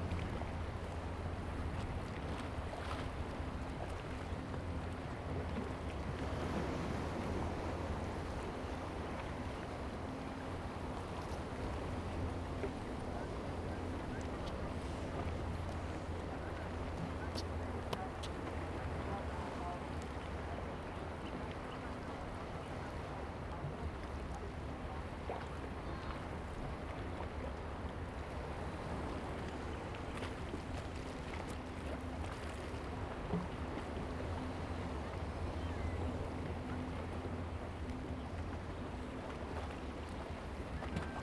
漁福漁港, Hsiao Liouciou Island - Small pier
Waves and tides, Small pier
Zoom H2n MS +XY